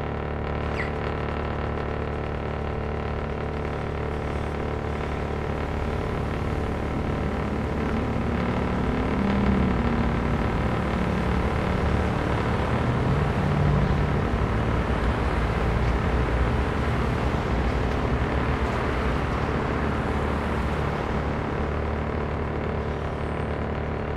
neoscenes: noise on the corner
Melbourne VIC, Australia, 17 May